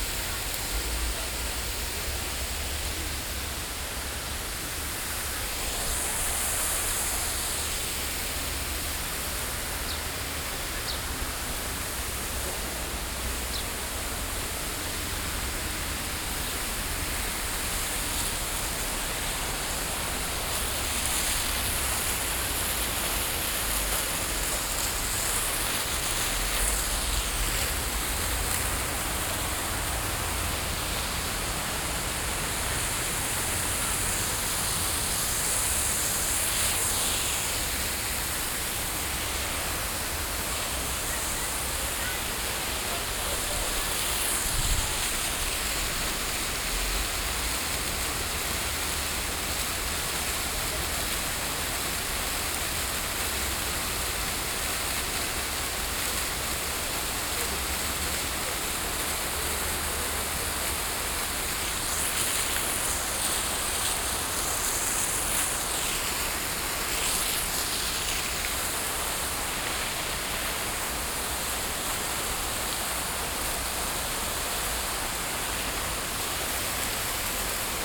Parque de la Ciudadela, Passeig de Picasso, Barcelona, Spain - Parc de la Ciutadella Fountain
Recording of water made during World Listening Day.
18 July 2015, 12:43